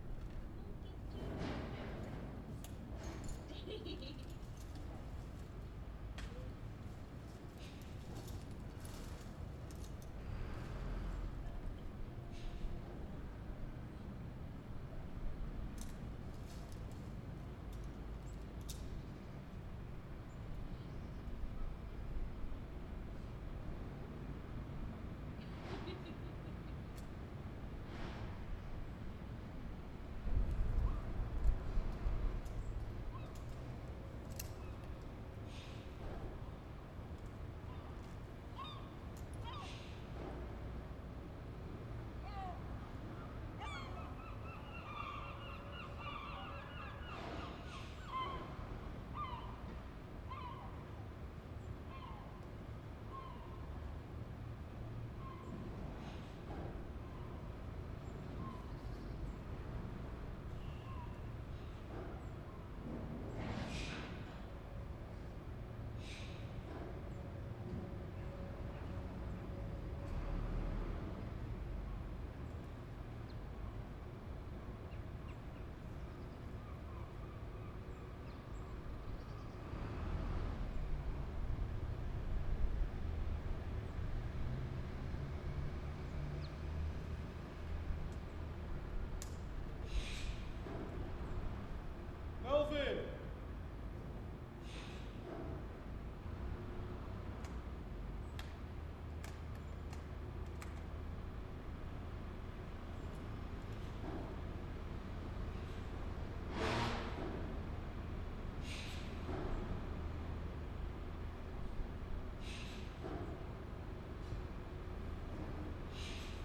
Birds in centre The Hague - Cutting down a tree
Cutting down a tree in a densely built place; branch by branch, from top to bottom. This particularly tree was sick and treating to fall on a daycare center.
This sound really annoys me every time.